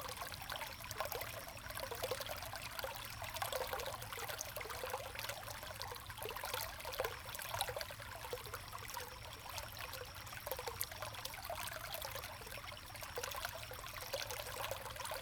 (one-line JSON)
{"title": "國立台東大學知本校區, Taitung County - small stream", "date": "2014-09-04 15:29:00", "description": "The sound of a small stream of water\nZoom H2n MS +XY", "latitude": "22.73", "longitude": "121.07", "altitude": "57", "timezone": "Asia/Taipei"}